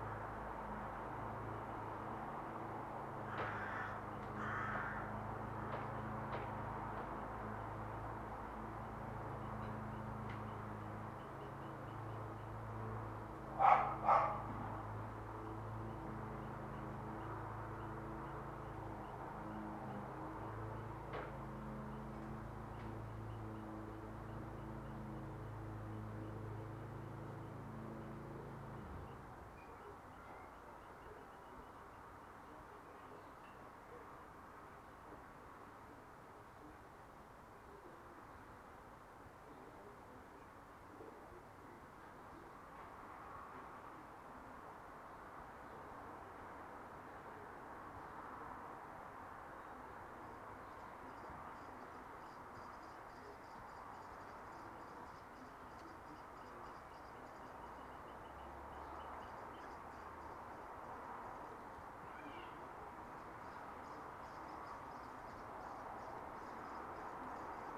Galway, Ireland - Back garden, Seaman Drive
Dogs barking, birds singing, lawnmowers, DIY and the nearby busy Galway-Headford road as heard from the back garden of a house I had been living in for three months and have since moved out of, in the quiet neighbourhood of Riverside. This estate was built on top of an old city dump, some of the houses (including mine) had suffered some major infrastructural damage due to land sinkage. Recorded with a Zoom H1 on the windowsill of my ground-floor window.
Co. Galway, Ireland